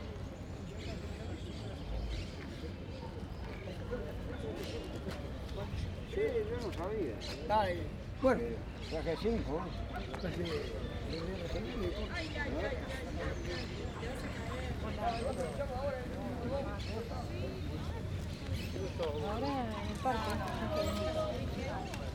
Punta Carretas, Montevideo, Uruguay - Heladero en Parque Rodó
Man selling ice-cream in the parc. He passed by me very close.